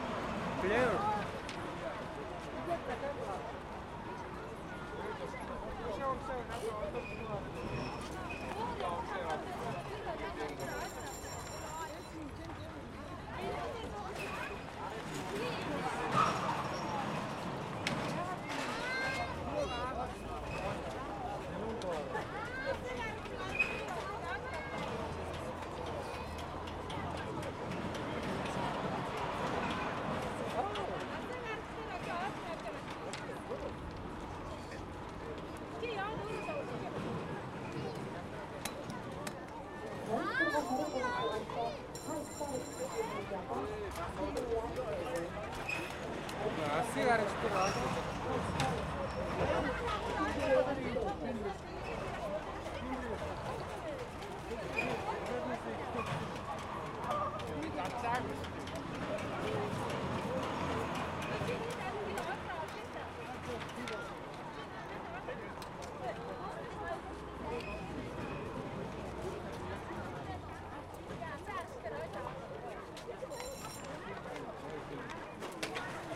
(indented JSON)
{
  "title": "National amusement park, Ulaanbaatar, Mongolei - rollercoaster",
  "date": "2013-06-01 15:16:00",
  "description": "a rollercoster for one person at a time, the cart were pulled to a high point and took than his way along the rails",
  "latitude": "47.91",
  "longitude": "106.92",
  "altitude": "1291",
  "timezone": "Asia/Ulaanbaatar"
}